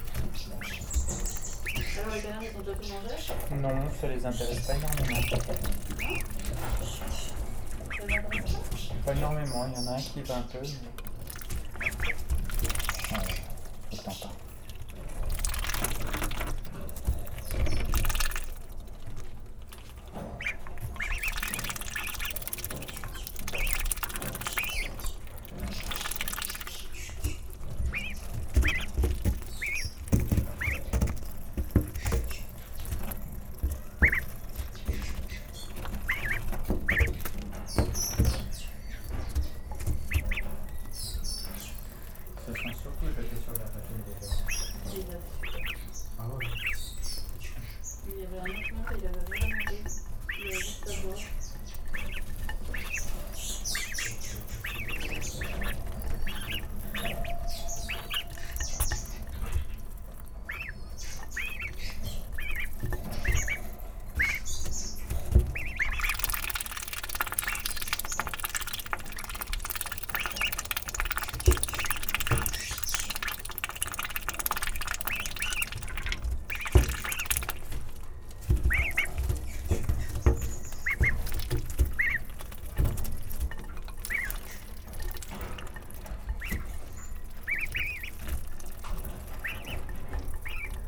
Ottignies-Louvain-la-Neuve, Belgique - Birdsbay, hospital for animals
Birdsbay is a center where is given revalidation to wildlife. It's an hospital for animals. This moment is when we give food to the ducklings. These scoundrels are very dirty and disseminated tons of "Water Lens" on the recorder !
Ottignies-Louvain-la-Neuve, Belgium, 8 August 2016